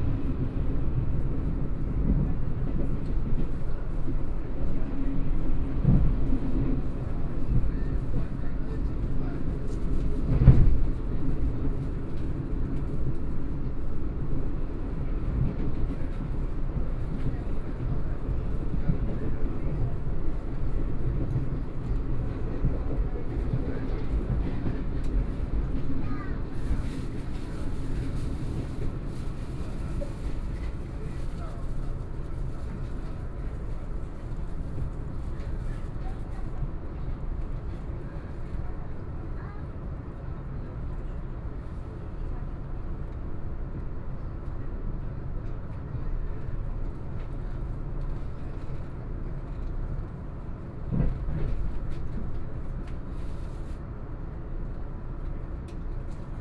from Hsinchu Station to Zhubei Station, Sony PCM D50 + Soundman OKM II